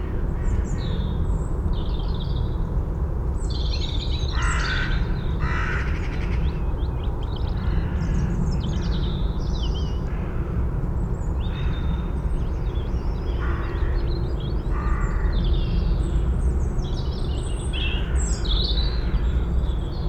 Houghton Regis Chalk Pit soundscape ... west reedbed ... parabolic on tripod to minidisk ... bird calls from carrion crow ... jackdaw ... robin ... corn bunting ... water rail ... reed bunting ... moorhen ...snipe ... lots of traffic noise ... just a note ... although man made ... this was once the only site in southern England where water stood on chalk ... it was an SSI ... sadly no more ...